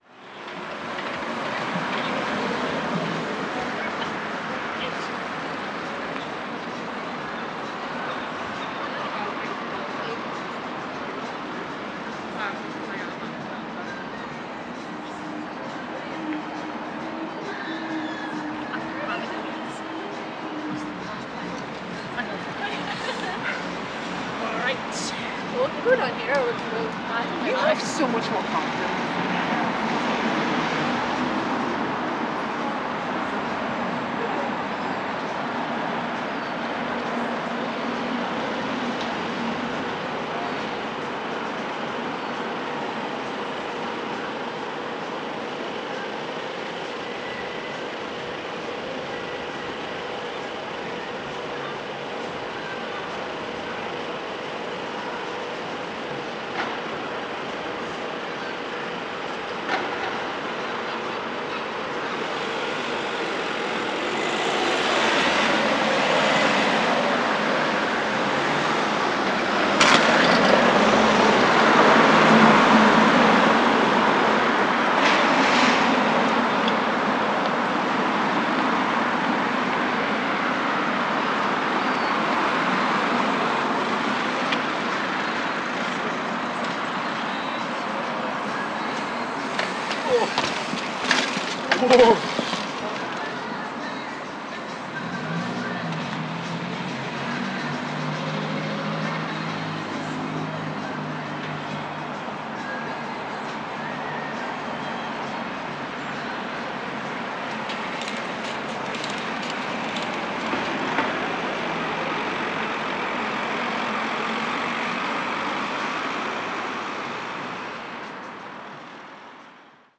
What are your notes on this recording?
Recording of the top of the alleyway where there is some bar music being played, some pedestrians walking and chatting, vehicles passing in the background, and an instance of bicyclists riding through.